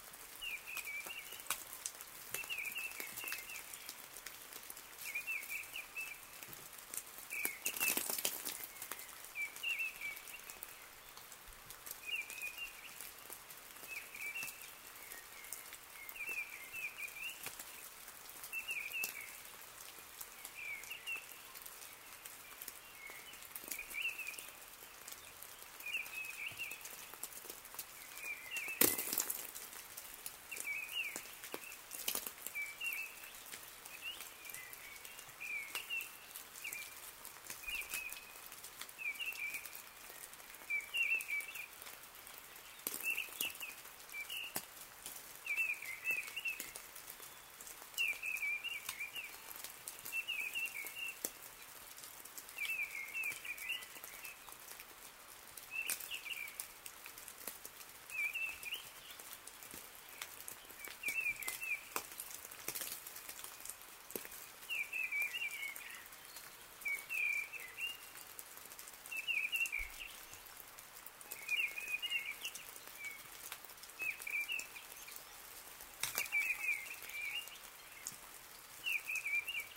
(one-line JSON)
{"title": "Le Fau, France - A long night of snowfall, sleet and hail", "date": "2017-05-01 06:10:00", "description": "During a long night and an early morning, a shower of snow falls on a small hamlet named Le Fau, in the Cantal mountains. We have to wait very long time before continuing the hike. Recorded at the end of the night, near the cheese factory of Jacques Lesmarie.", "latitude": "45.10", "longitude": "2.60", "altitude": "958", "timezone": "Europe/Paris"}